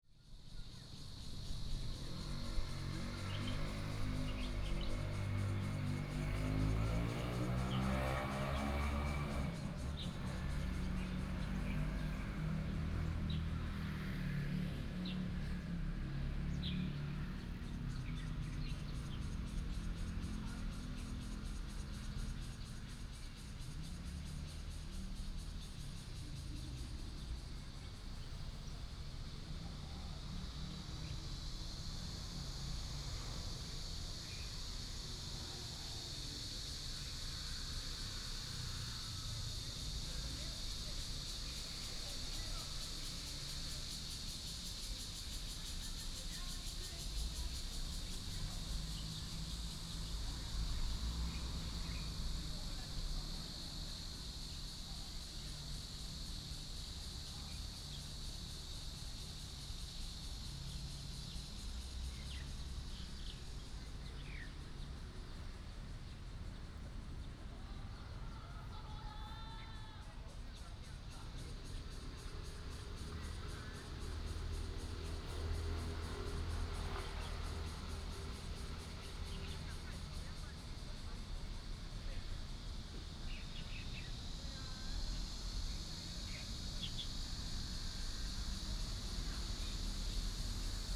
瑞發公園, Bade Dist., Taoyuan City - Hot weather
traffic sound, in the Park, sound of birds, Cicada cry